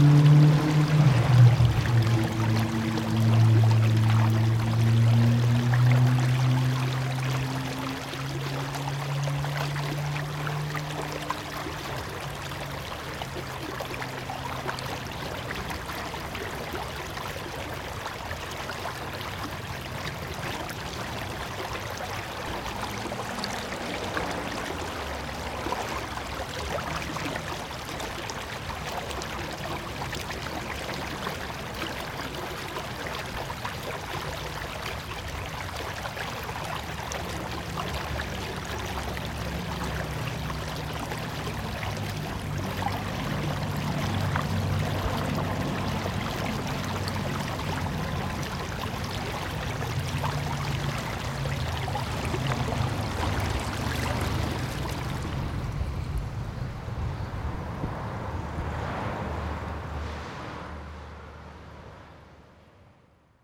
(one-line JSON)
{"title": "wülfrath, oberdüssler weg, düsselbach", "date": "2008-06-24 22:58:00", "description": "frühjahr 07 nachmittags - fluss der noch kleinen düssel unter autobahnbrücke nahe strasse\nSpring 2007 in the late afternoon. The peaceful gurgling of a small river appearing under a long highway bridge nearbye a street\nproject - :resonanzenen - neanderland soundmap nrw - sound in public spaces - in & outdoor nearfield recordings", "latitude": "51.28", "longitude": "7.08", "altitude": "178", "timezone": "Europe/Berlin"}